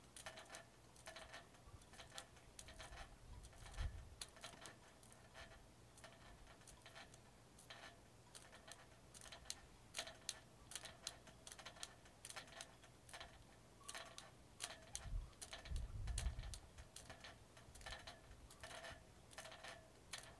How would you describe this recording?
Leave the cabin on a bitterly cold 5am in the San Juan Islands (Washington). Walk toward the dock, stopping at a loose metal sign. Surprise a sleeping blue heron. Step on a loose board. End on the dock to the gamelan chorus of sailboat riggings in the wind.